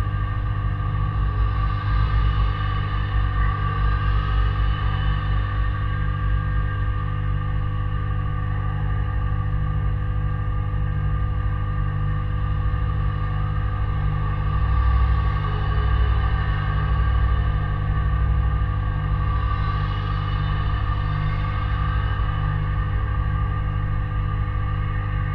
Karaliaus Mindaugo pr., Kaunas, Lithuania - Pedestrian bridge railing drone
Dual contact microphone recording of pedestrian bridge metal railing. Steady droning hum and resonating noises of cars passing below the bridge.
Kauno miesto savivaldybė, Kauno apskritis, Lietuva, March 2020